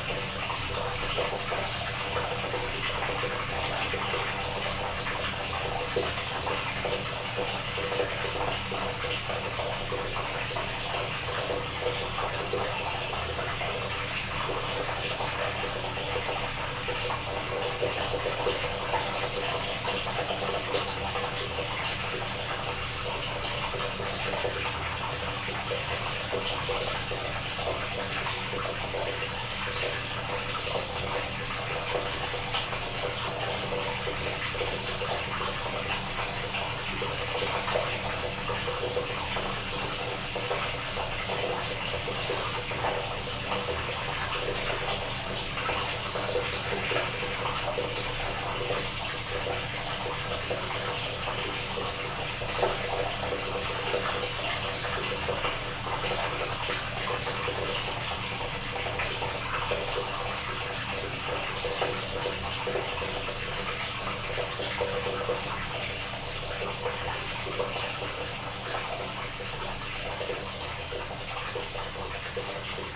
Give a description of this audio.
small microphoms in the well near old hydroelectric power station